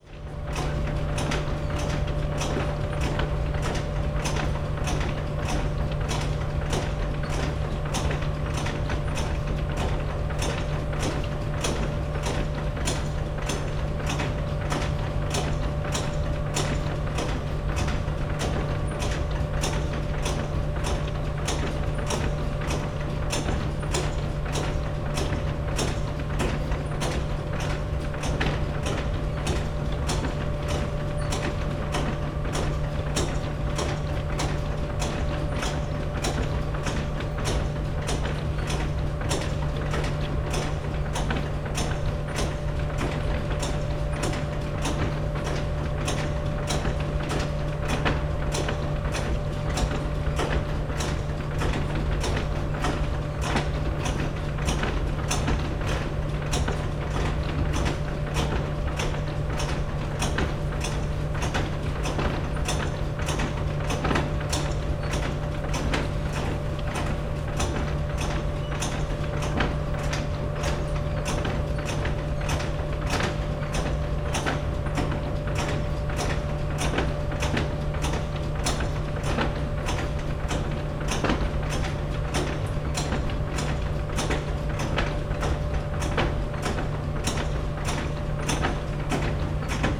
Stau/Hunte, Oldenburg - animal food factory at night
Oldenburg, river Hunter, Agravis food factory, conveyer belt and drone at night
(Sony PCM D50, DPA4060)
Oldenburg, Germany, 15 September 2016, 00:45